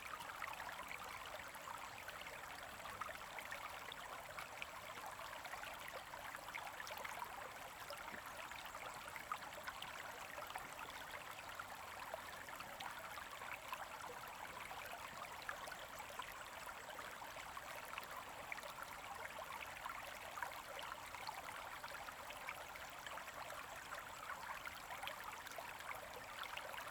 乾溪, Puli Township, Nantou County - Small streams
Stream sound, Small streams
Zoom H2n MS+ XY